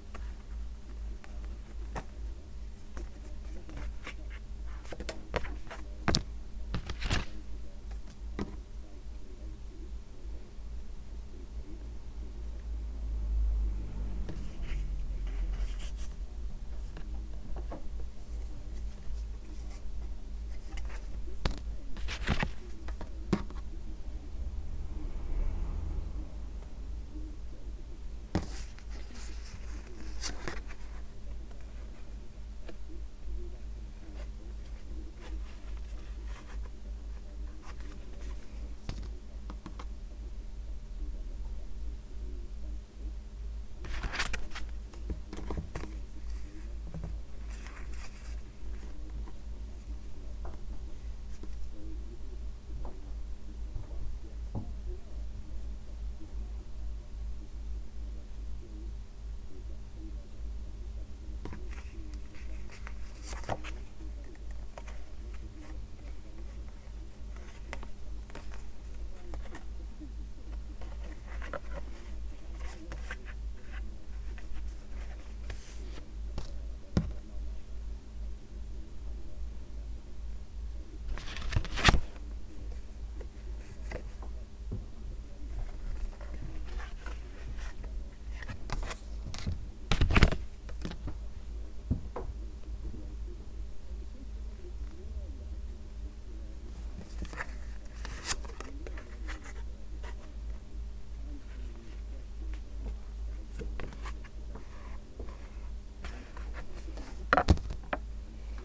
Jamieson & Smith, Shetland Islands, UK - Ella making up shade cards
This is the sound of Ella assembling shade cards for prospective buyers of Shetland wool. Jamieson & Smith stock an amazing number of different shades, and distant buyers need to be able to see tiny samples of all of these. What you can hear is Ella taking balls of the different shades out of a plastic storage bag, cutting small lengths of them, and then tying them onto pieces of card with the shade numbers corresponding to the shades written on them. The sound in Jamieson & Smith is lovely; a mellow, woody tone, with the softness of a place that is stacked floor to ceiling with amazing knitted things and objects comprised of wool.
6 August 2013, 15:48